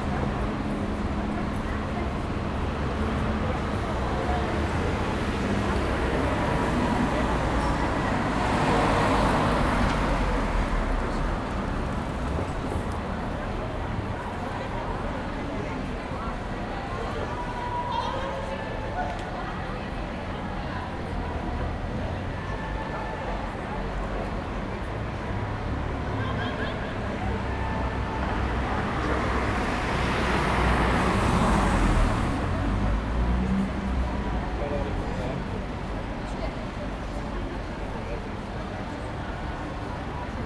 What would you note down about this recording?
The is a new bar in town and is not that great. Waiting for the piece of pizza an listening to the sounds of the street.